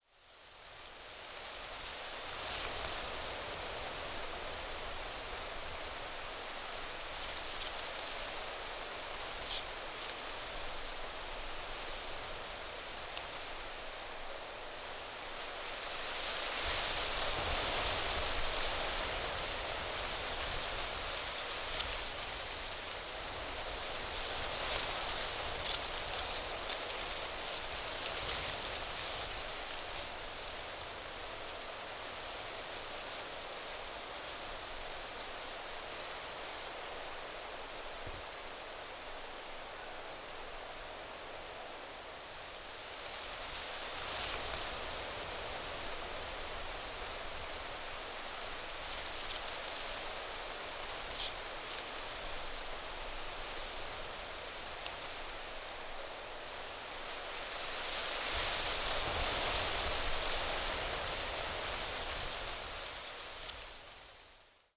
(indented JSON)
{
  "title": "Culliford Tree Barrows, Dorset, UK - wind in the trees",
  "date": "2015-10-01 15:00:00",
  "description": "Part of the Sounds of the Neolithic SDRLP project funded by The Heritage Lottery Fund and WDDC.",
  "latitude": "50.67",
  "longitude": "-2.43",
  "altitude": "138",
  "timezone": "Europe/London"
}